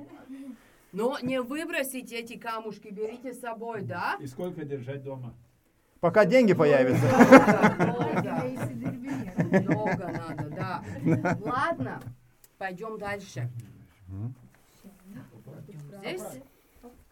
Riezupe sand cave excursion
Excusion in Riezupe quartz sand caves. 11 meters under ground.
Latvija, 12 July 2021